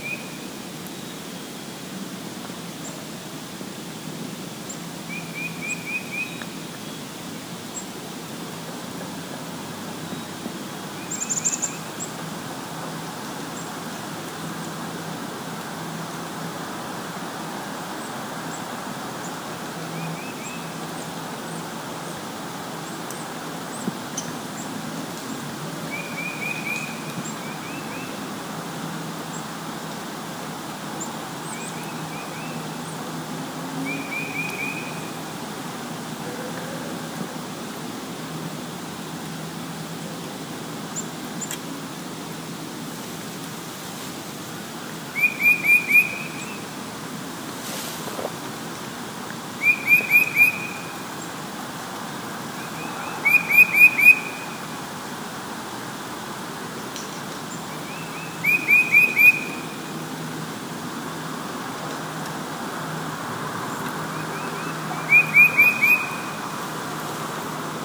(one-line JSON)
{"title": "Vassar College, Raymond Avenue, Poughkeepsie, NY, USA - Vassar Farm, fresh snow, sunny afternoon, sounds in the woods on a footbridge over a stream", "date": "2015-02-22 13:30:00", "description": "ice falling from limbs, birds, cars nearby", "latitude": "41.67", "longitude": "-73.89", "altitude": "43", "timezone": "America/New_York"}